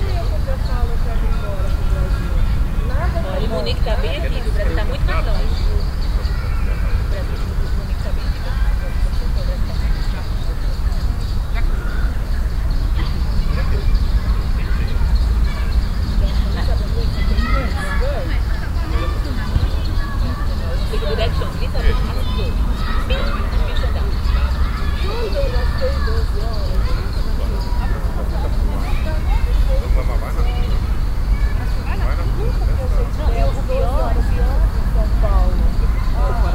{"title": "cologne, stadtgarten, nahe anwohner", "date": "2008-05-07 21:34:00", "description": "stereofeldaufnahmen im september 07 abends\nproject: klang raum garten/ sound in public spaces - in & outdoor nearfield recordings", "latitude": "50.95", "longitude": "6.94", "altitude": "55", "timezone": "Europe/Berlin"}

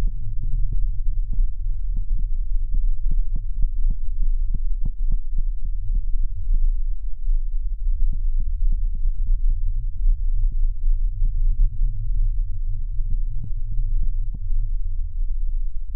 {"title": "Mikieriai, Lithuania, hanging bridge", "date": "2020-03-17 16:40:00", "description": "Low frequencies: use good headphones or speakers. Recorded with contact microphones and geophone on support wires of hanging bridge.", "latitude": "55.66", "longitude": "25.18", "altitude": "82", "timezone": "Europe/Vilnius"}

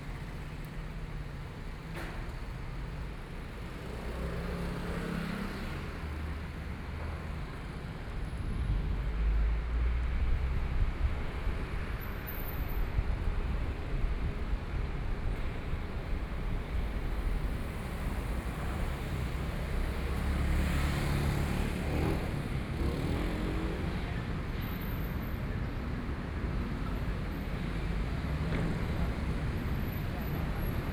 {"title": "中山區聚葉里, Taipei City - Walking across the different streets", "date": "2014-02-27 08:26:00", "description": "Walking across the different streets, Traffic Sound, Environmental sounds, Birdsong, Went to the main road from the alley\nBinaural recordings", "latitude": "25.06", "longitude": "121.52", "timezone": "Asia/Taipei"}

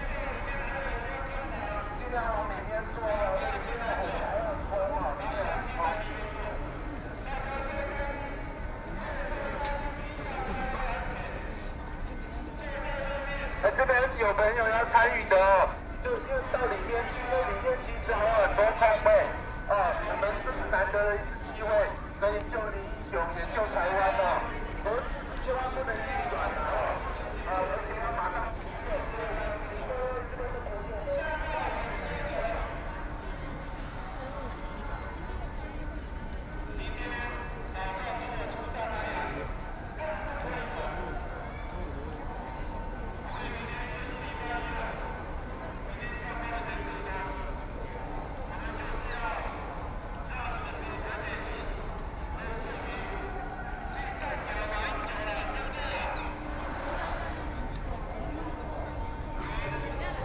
{"title": "Zhong Xiao W. Rd., Taipei City - occupy", "date": "2014-04-27 16:49:00", "description": "No-nuke Movement occupy Zhong Xiao W. Rd.", "latitude": "25.05", "longitude": "121.52", "altitude": "27", "timezone": "Asia/Taipei"}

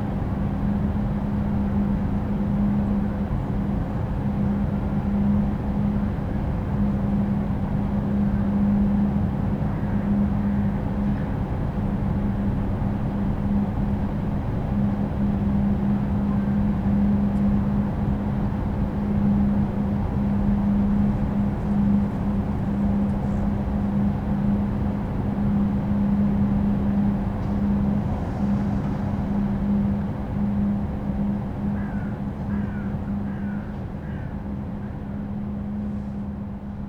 {"title": "berlin, maybachufer: supermarket - the city, the country & me: outside ventilation of the adjacent supermarket", "date": "2014-01-11 16:02:00", "description": "car parking roof of a supermarket, outside ventilation of the adjacent supermarket\nthe city, the country & me: january 11, 2014", "latitude": "52.49", "longitude": "13.43", "timezone": "Europe/Berlin"}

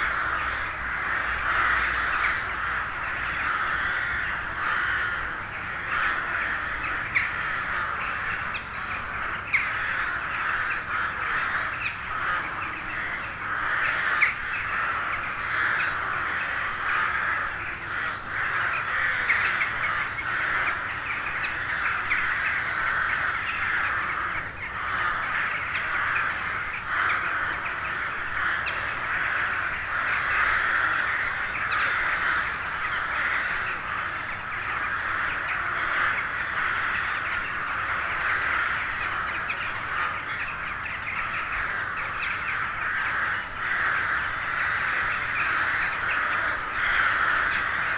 {"title": "Neuflize - Corneilles", "date": "2017-07-05 16:22:00", "description": "L'hiver, à la tombée du jour, des centaines de corneilles s'installent pour la nuit dans un bois de peupliers.", "latitude": "49.41", "longitude": "4.30", "altitude": "84", "timezone": "Europe/Paris"}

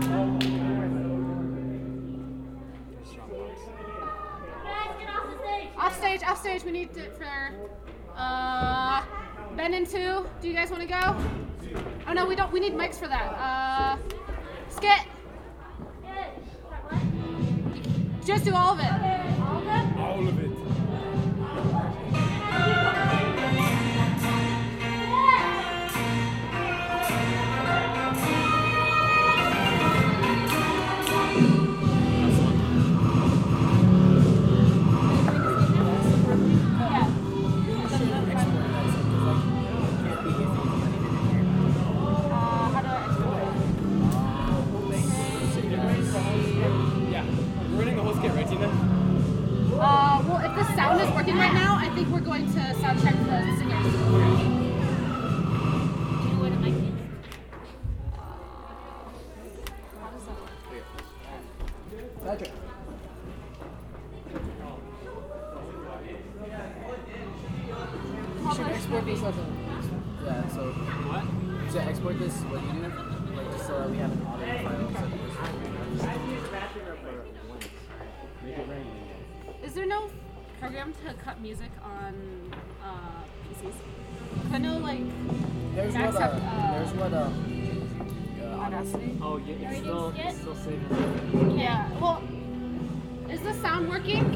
{"title": "UMC Glennmiller Ballroom - VSA Prepares for their Tet Show", "date": "2013-02-02 16:55:00", "description": "The Vietnamese Student Association of Boulder prepare for their annual Lunar New Year Show (Tet Show).", "latitude": "40.01", "longitude": "-105.27", "altitude": "1660", "timezone": "America/Denver"}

